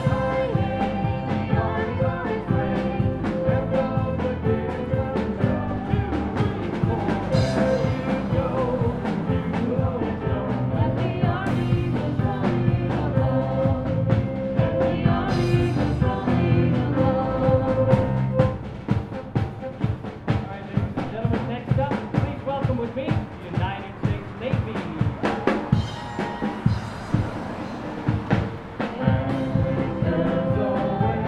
neoscenes: Young Marines practicing for parade
Prescott, AZ, USA, 1 July 2011, 19:49